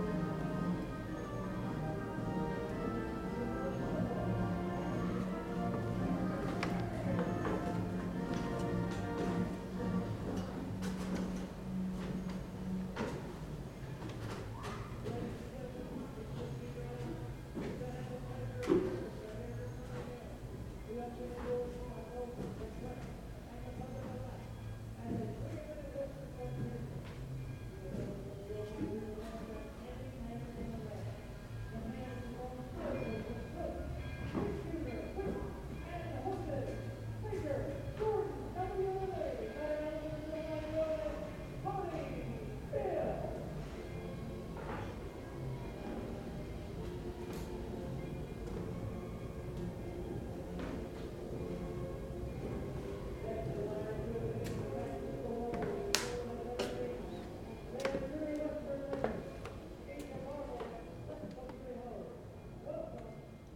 Prichard Barn, S Campbell St, Abilene, KS, USA - From Inside the Barn
From the second story of the 1915 Prichard Barn, located on the grounds of the Dickinson County Heritage Center, a number of sounds are heard. Just to the south, the Abilene & Smoky Valley Railroads steam engine (Santa Fe 4-6-2- Pacific #3415) passes by. To the northwest, the Centers 1901 C.W. Parker carousel operates, as a visitor rings the bell near the schoolhouse (northeast). Further to the south, amplified sounds from the Trails, Rails & Tales festival can be heard, followed by footsteps on the wood floor. Stereo mics (Audiotalaia-Primo ECM 172), recorded via Olympus LS-10.